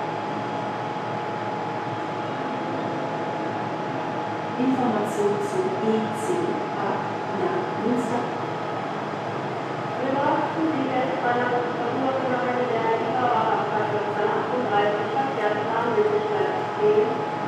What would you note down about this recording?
after the storm sabine the rail traffic in germany collapsed for some hours, here a recording of the main station mannheim with corresponding announcements. zoom h6